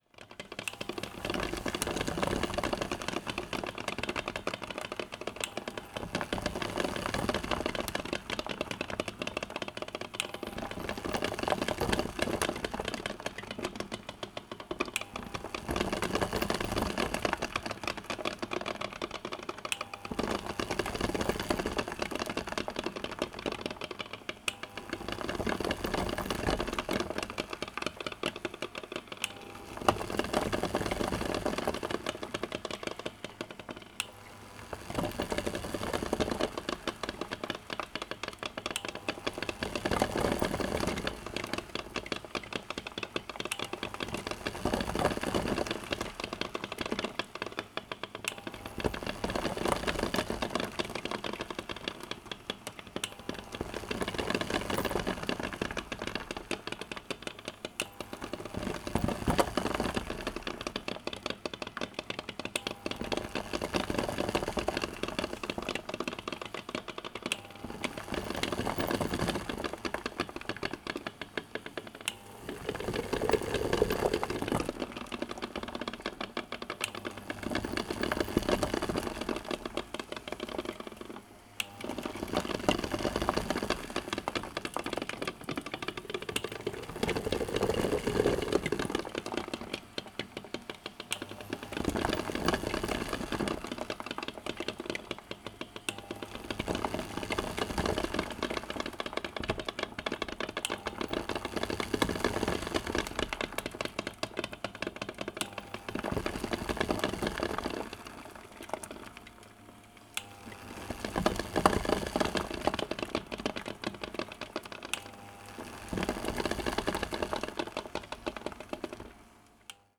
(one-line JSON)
{"title": "Sasino, summerhouse at Malinowa Road, kitchen - eggs", "date": "2016-05-26 10:41:00", "description": "cooking eggs on an inductive stove (sony d50)", "latitude": "54.76", "longitude": "17.74", "altitude": "23", "timezone": "Europe/Warsaw"}